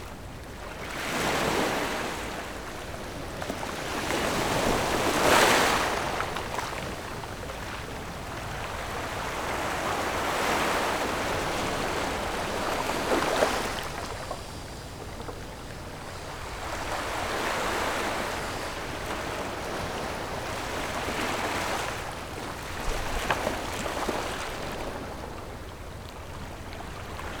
At the beach, Sound of the waves
Zoom H6+ Rode NT4
福建省, Mainland - Taiwan Border, 14 October 2014